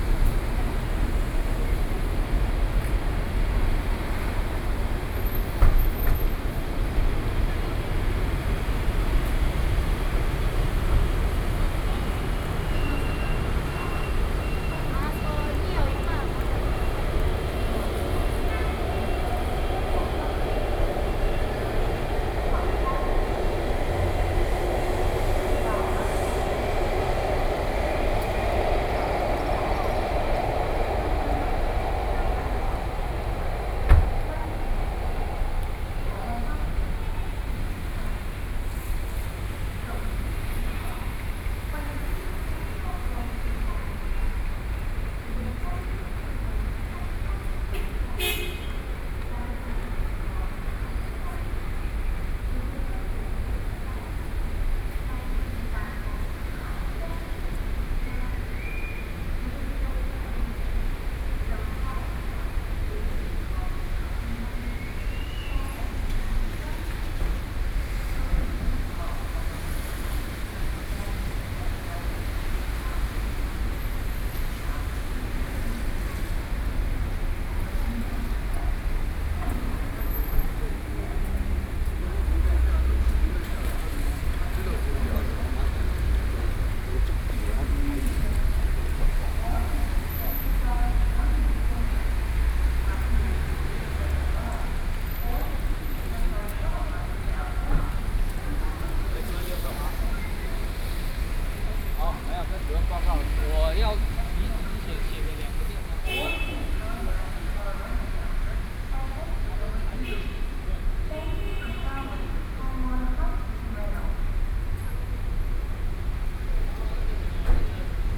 Outside the station, Sony PCM D50 + Soundman OKM II
Taibao City, Chiayi County, Taiwan, 26 July 2013, 19:56